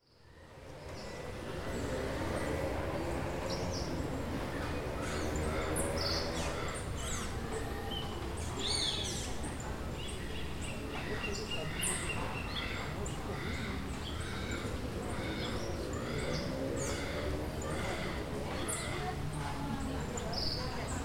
Rivera, Huila, Colombia - AMBIENTE CASA DE LA CULTURA DE RIVERA
GRABACION STEREO, TASCAM DR-40 REALIZADO POR: JOSÉ LUIS MANTILLA GÓMEZ.